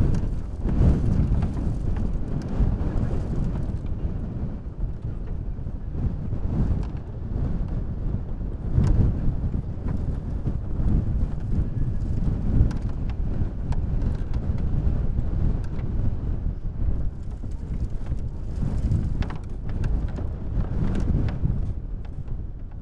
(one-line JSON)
{"title": "Sniezka mountain - sleighs", "date": "2017-01-22 13:35:00", "description": "heavy wind knocking around a pair of sleighs. recorder stuck between them. gusts of wind and crackle of ice shards. (sony d50)", "latitude": "50.74", "longitude": "15.74", "altitude": "1592", "timezone": "GMT+1"}